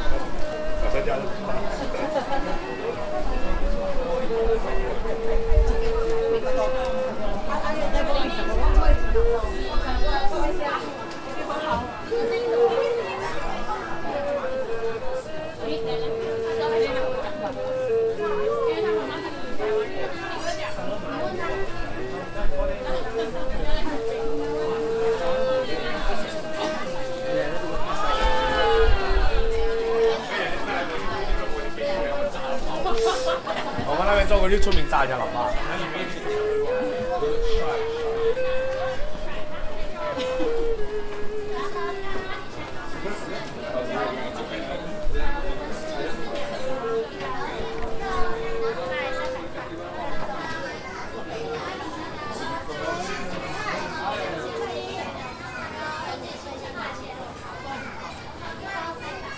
No., Jishan Street, Ruifang District, New Taipei City, Taiwan - Audio Postcard, Taiwan, JiuFen Old Street (九份老街), 12 11 2018
Recorded street scene at JiuFen Old Street (九份老街) with my Tascam DR-40
Voices of vendors, visitors and an erhu player in the background.
Find more recordings trough following link:
12 November 2018, 11:00